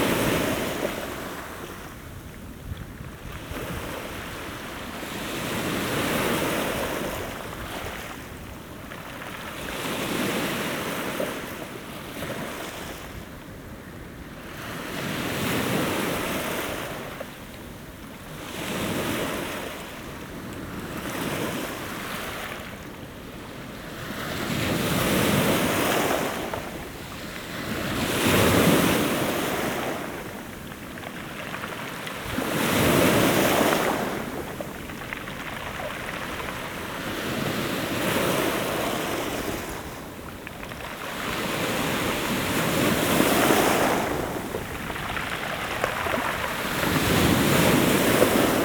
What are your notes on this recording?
Small waves glide over a rocky beach.